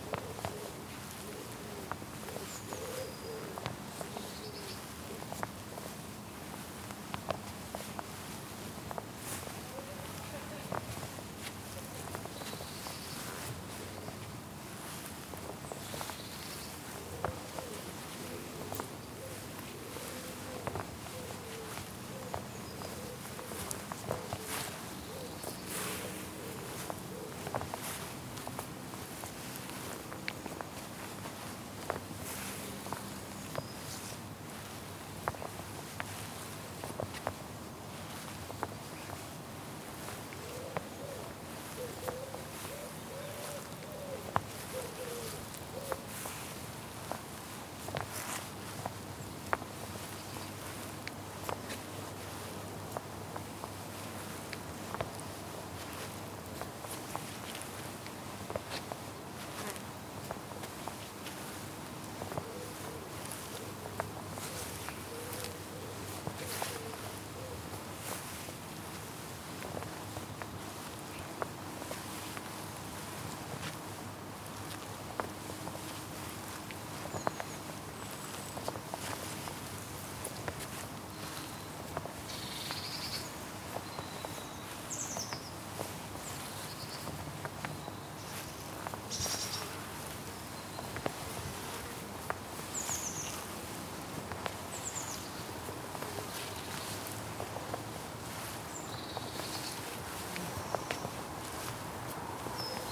Hill Side, Lancaster, UK - Lancaster Priory Walking Meditation
Walking meditation around the churchyard of Lancaster Priory Church. Recorded on a Tascam DR-40 using the on-board microphones (coincident pair) and windshield.